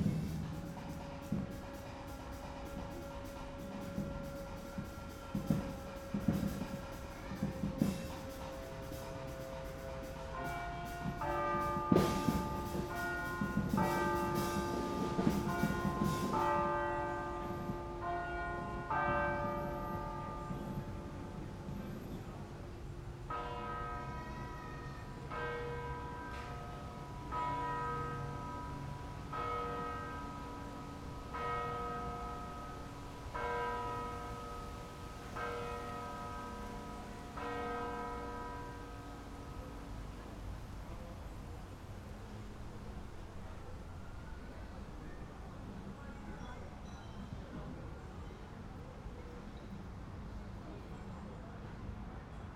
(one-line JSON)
{"title": "lisbon, jardim da estrela - soundcheck, church bells", "date": "2010-07-03 20:00:00", "description": "soundcheck for concert by radio zero, nearby churchbells at 8pm", "latitude": "38.71", "longitude": "-9.16", "altitude": "83", "timezone": "Europe/Lisbon"}